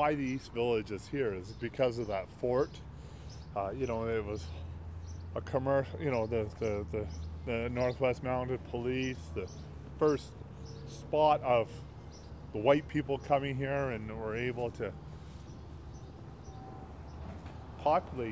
Fort Calgary, Ave SE, Calgary, AB, Canada - Fort Calgary
Through telling and sharing stories about the East Village, the project gives space to experiences and histories that are not adequately recognized.